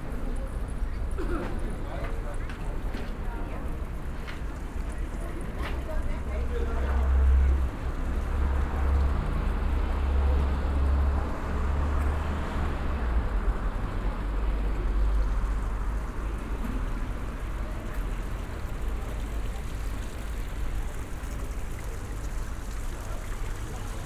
Paulus Kirche, Hamm, Germany - Green market, church quiet and noon bells
walking towards the main church doors, pushing them open to enter, lingering a little inside listening and returning in to the buzz outside; people have gathered around the fountain, in required safety distance, noon bells...
2 April 2020